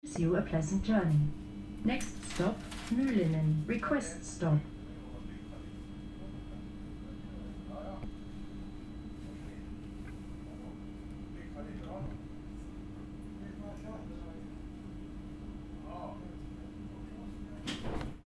Durchfahrt in Mülenen bei Bern Spiez
Durchfahrt in Mülenen bei Spiez, es geht alpwärts in Richtung Bern und Wallis
Spiez, Schweiz, 8 July 2011, ~15:00